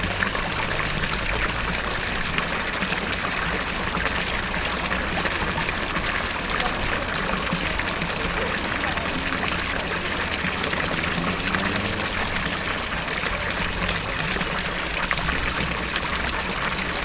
Fontaine place de la république
Sarreguemines
Place de la république